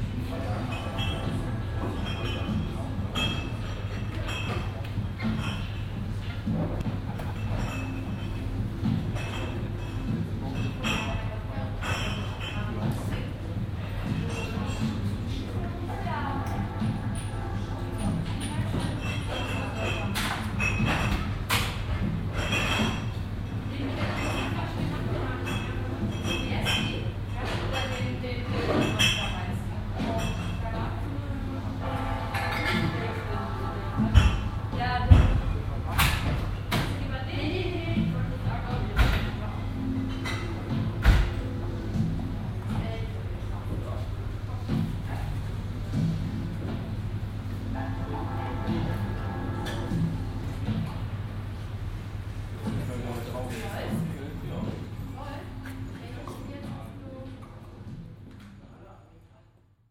Berlin, Möbel Olfe - Möbel Olfe: Ouverture, Sonntag 15.07, 21:45
abend, sommerliche stimmung, das möbel olfe öffnet gerade, platz an der tür, klänge von innen und aussen
evening, summer, pub opens, at the door, sounds from in and outdoors
Berlin, Deutschland